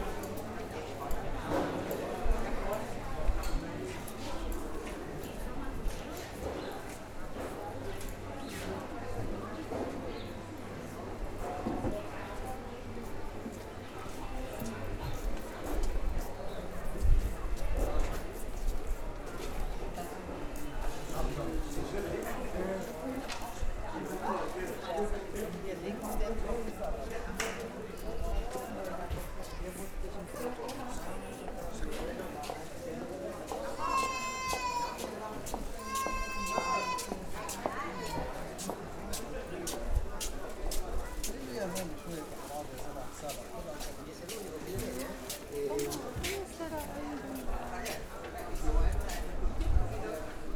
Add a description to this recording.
soundwalk through hall 3 of the dong xuan center, a vietnamese indoor market with hundreds of shops where you will find everything and anything (food, clothes, shoes, electrical appliance, toys, videos, hairdressers, betting offices, nail and beauty studios, restaurants etc.) the city, the country & me: march 6, 2011